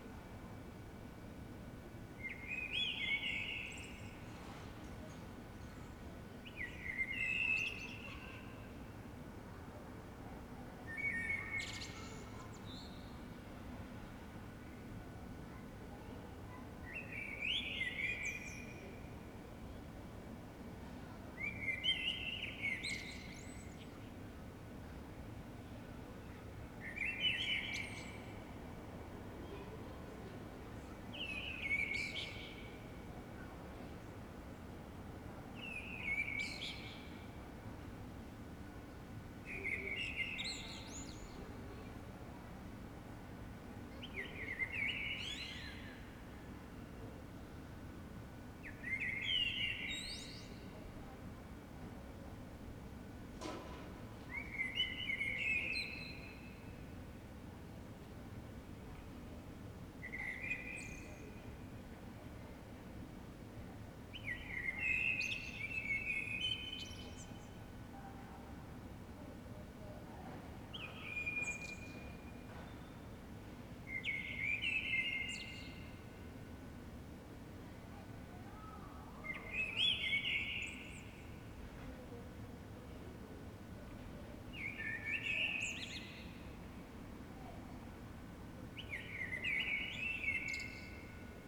Ascolto il tuo cuore, città. I listen to your heart, city. Several chapters **SCROLL DOWN FOR ALL RECORDINGS** - Terrace at sunset in the time of COVID19 Soundscape
Chapter VIII of Ascolto il tuo cuore, città. I listen to your heart, city
Saturday March 14th 2020. Fixed position on an internal terrace at San Salvario district Turin, four days after emergency disposition due to the epidemic of COVID19.
Start at 6:35 p.m. end at 7:25 p.m. duration of recording 50'30''